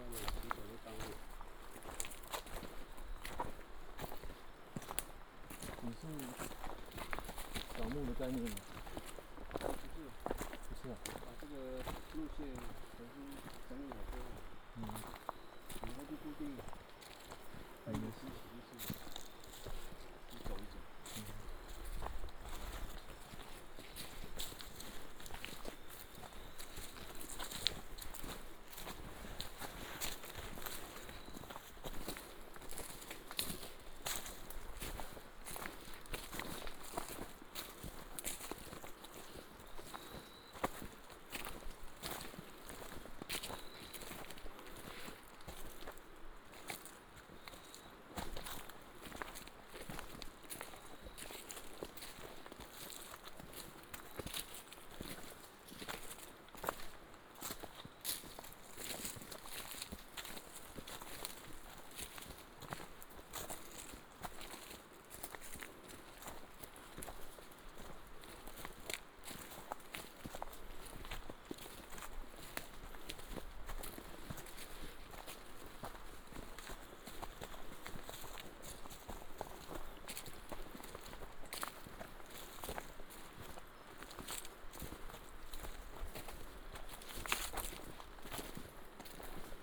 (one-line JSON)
{"title": "土板產業道路, Daren Township - mountain trail", "date": "2018-04-05 21:59:00", "description": "Follow tribal hunters walking on mountain trail, Ancient tribal mountain road, stream", "latitude": "22.44", "longitude": "120.86", "altitude": "236", "timezone": "Asia/Taipei"}